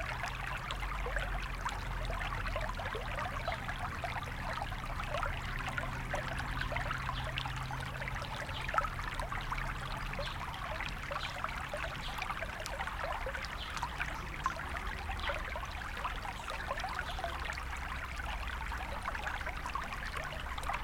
Rue Eugène Fert, Aix-les-Bains, France - Filet d'eau
Au bord du Sierroz au plus bas.